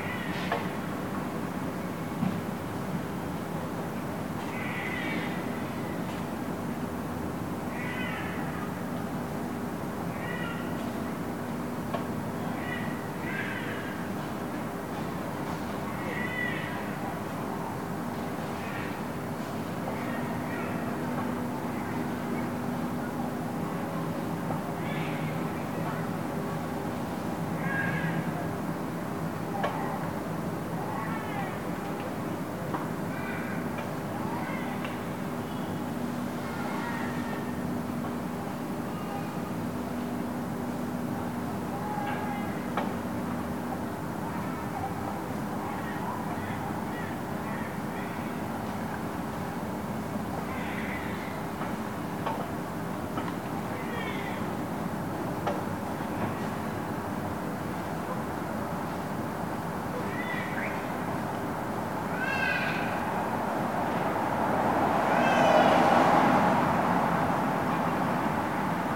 ул. Новая, Нижний Новгород, Нижегородская обл., Россия - evening
this sound was recorded by members of the Animation Noise Lab
evening at the street